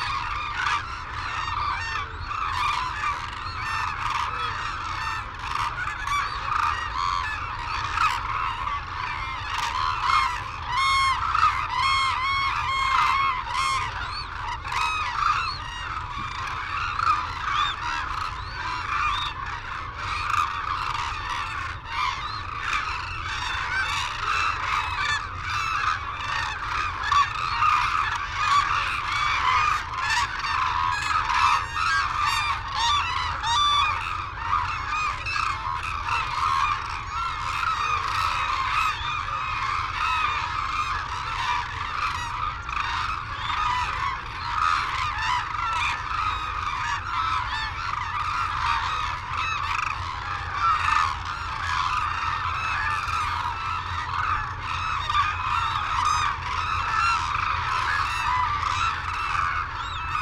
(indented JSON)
{
  "title": "Sho, Izumi, Kagoshima Prefecture, Japan - Crane soundscape ...",
  "date": "2008-02-18 08:30:00",
  "description": "Arasaki Crane Centre ... Izumi ... calls and flight calls from white naped cranes and hooded cranes ... cold windy sunny morning ... Telinga Pro DAT 5 to Sony Minidisk ... background noise ... wheezing whistles from young birds ...",
  "latitude": "32.10",
  "longitude": "130.27",
  "altitude": "3",
  "timezone": "Asia/Tokyo"
}